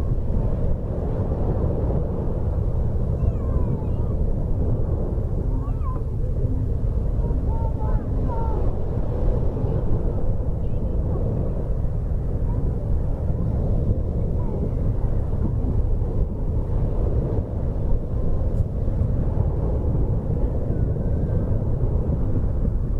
Beach at overcombe in summer 2009
in summer 2009 on beach at overcombe corner. rumble of sea and pebbles. Family talking in distance.
England, United Kingdom